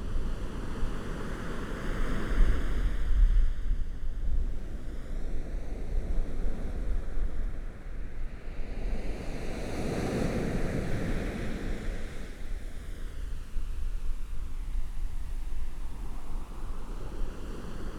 北濱公園, Hualien City - Sound of the waves
Sound of the waves, Binaural recordings, Zoom H4n+Rode NT4 + Soundman OKM II
Hualian City, 花蓮北濱外環道